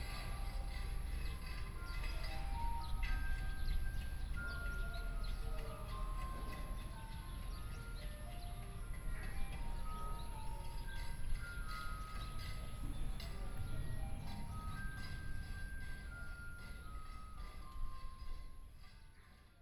岳明國小, Su'ao Township - In front of the primary school
In front of the primary school, Hot weather, Traffic Sound, Birdsong sound, Small village, Garbage Truck, Sound from Builders Construction
Yilan County, Taiwan, July 2014